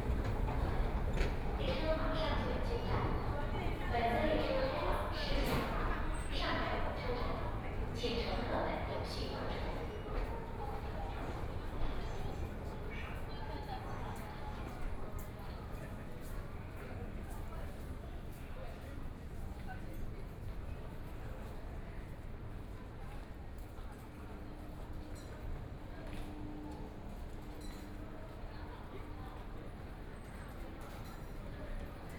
South Xizang Road Station, Shanghai - Walking in the subway station
Walking in the subway station, From the station entrance, Via escalators, After walking in the hall, Toward the platform, Voice message broadcasting station, Binaural recording, Zoom H6+ Soundman OKM II